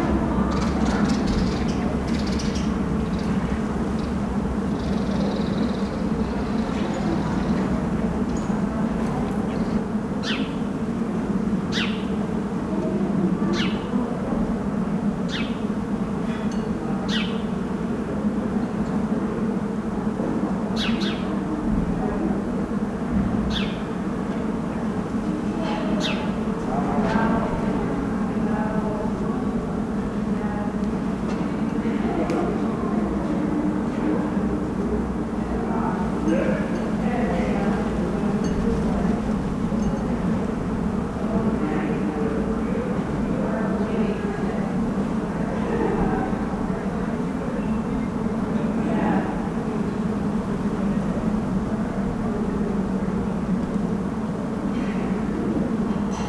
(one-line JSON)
{"title": "Schwartzkopffstraße, Berlin, Germany - Kirschbaum mit Bienen und anderen Insekten", "date": "2020-04-12 13:00:00", "description": "Ein Sonntagmittag während des Corona-Lockdowns, ein Kirschbaum in voller Blüte mit, offenbar, Tausenden Insekten, vor allem Honigbienen.\nA Sunday noon during the Corona-lockdown, thousands of all kinds of bees in a fully flowering cherry-tree.\nUna domenica al mezzogiorno durante il cosi detto lockdown, migliaia di una grossa varieta di api in un albero di ciliege.", "latitude": "52.53", "longitude": "13.38", "altitude": "38", "timezone": "Europe/Berlin"}